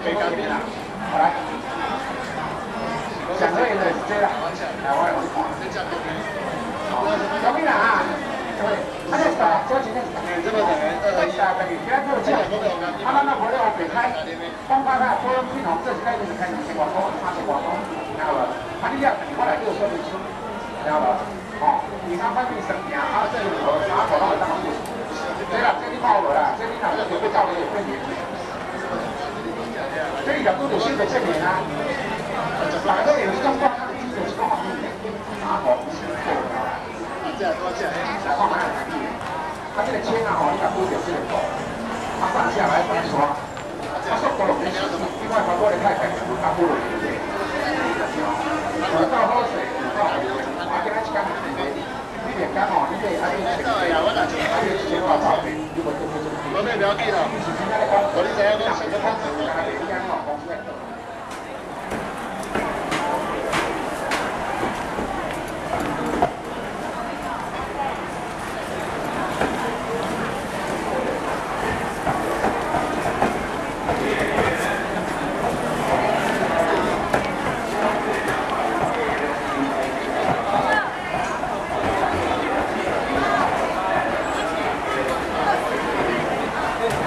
Zuoying District - soundwalk
walking in the Sunset Market, Sony ECM-MS907, Sony Hi-MD MZ-RH1